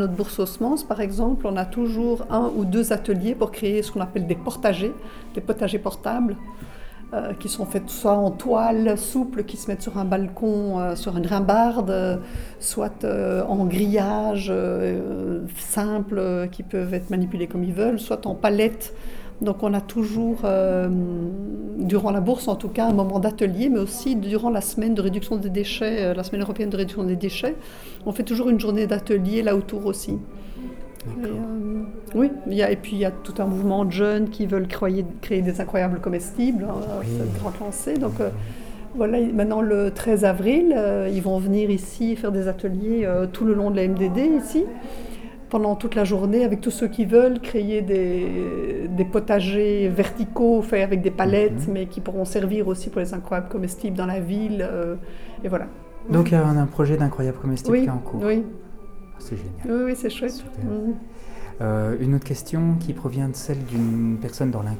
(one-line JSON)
{"title": "Centre, Ottignies-Louvain-la-Neuve, Belgique - sustainable development house", "date": "2016-03-24 17:10:00", "description": "In Louvain-La-Neuve, there's a place called sustainable development house. This is a completely free access area where people can find various informations about environmental thematic. Books, workshops, seed, permaculture, there's a wide variety of goals. Completely in the heart of Louvain-La-Neuve, below an amphitheater, this house is a welcoming place. Aline Wauters explains us what is this special place and what can be found there.", "latitude": "50.67", "longitude": "4.61", "altitude": "115", "timezone": "Europe/Brussels"}